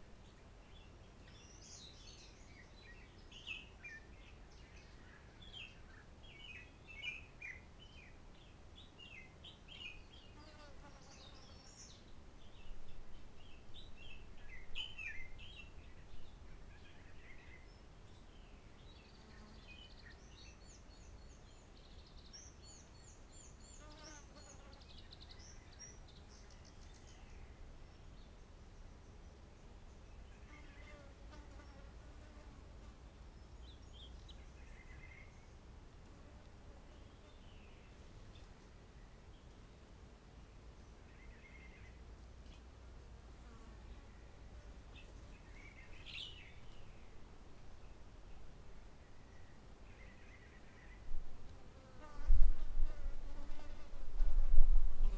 Forêt de la Roche Merveilleuse, Réunion - 20181205 120044 lg78rvsa0466 ambiance sonore CILAOS MATARUM
04:56 tec tec mouche, merle-maurice (loin)
08:20 passage hélicoptère de type robinson, exemple à isoler et à mettre à part dans les exemple de nuisances anormales.
les oiseaux chantent plus fort sur le moment mais ensuite s'arrêtent
13:28 nouveau passage hélicoptère
24:08 hélico plus loin
Il s'agit d'un petit robinson de couleur blanche.
28:39 retour calme.
Cet enregistrement est l'occasion de mesurer l'émergence acoustique
5 December 2018